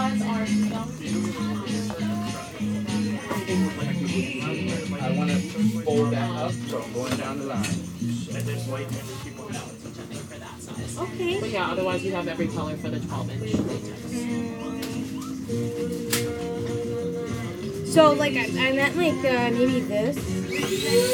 W Broadway, New York, NY, USA - At the Balloon Store
Inside a balloon store:
Sounds of balloons being filled and popping;
a customer is trying to buy a specific kind of balloons;
music playing in the background.
Zoom H6